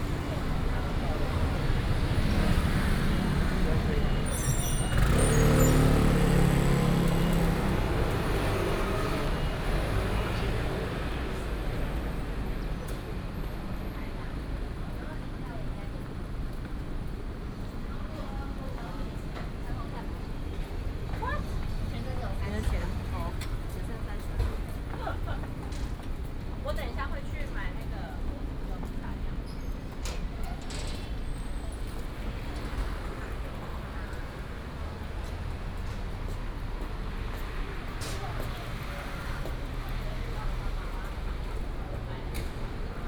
Tonghua St., Da’an Dist., Taipei City - walking in the Street
walking in the Street, Traffic noise, Sound of thunder